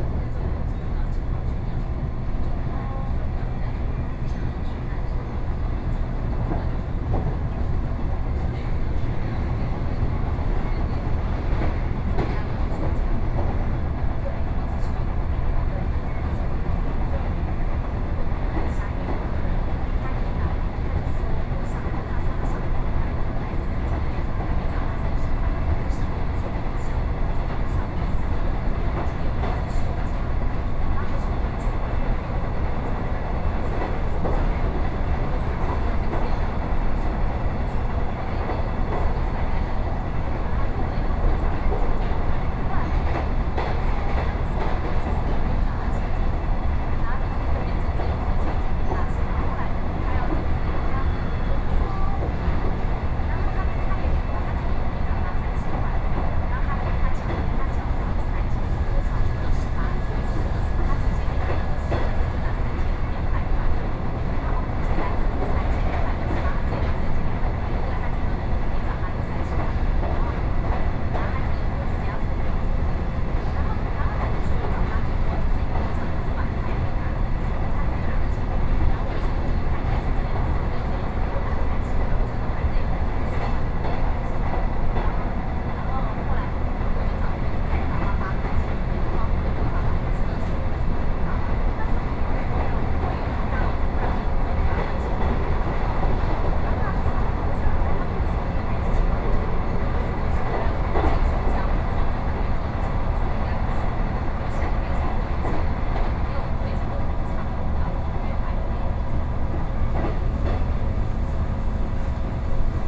Taoyuan - In the compartment
from Yangmei Station to Fugang Station, Sony PCM D50+ Soundman OKM II